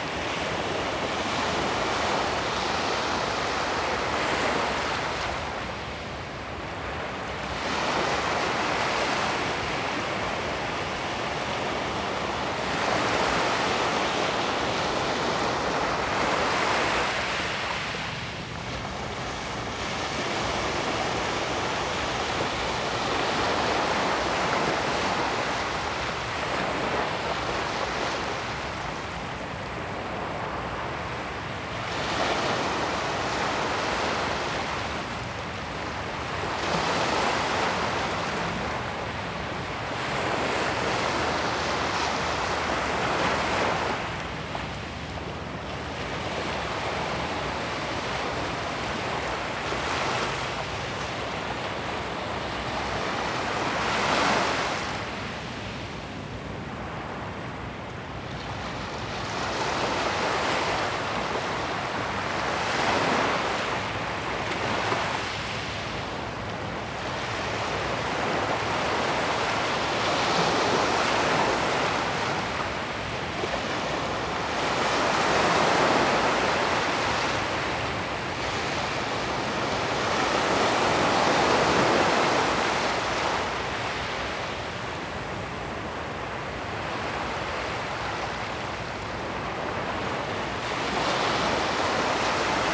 淡水區崁頂里, New Taipei City - the waves
at the seaside, Sound waves
Zoom H2n MS+XY + H6 XY
New Taipei City, Taiwan, 5 April 2016